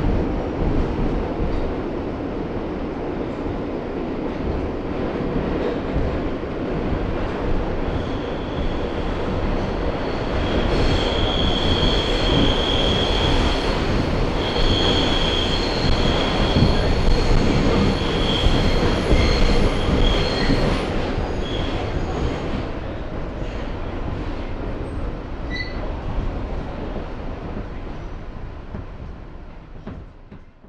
W 23rd St, New York, NY, USA - on a Subway Train downtown
riding on a Subway Train downtown from 23rd Street with a stop at 14th Street and continuing to West 4th Street
18 October 1999